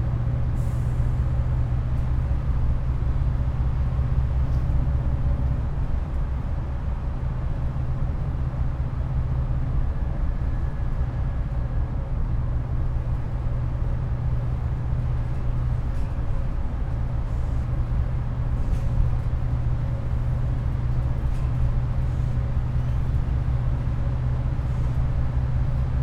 High St, Malton, UK - 843 bus to Scarborough ...
843 bus to Scarborough ... the 07:21 ... travelling through Seamer ... Crossgates ... walk into the towncentre ... lavalier mics clipped to hat ... all sorts of background noises ... voices etc ... recordists curse ... initially forgot to press record ...